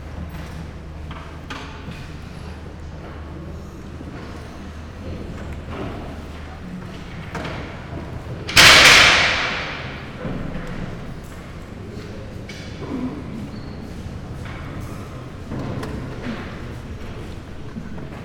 basilica, Novigrad, Croatia - murmur of people, prayers
sonic scape while people gather, wooden benches, coughs, snuffle ...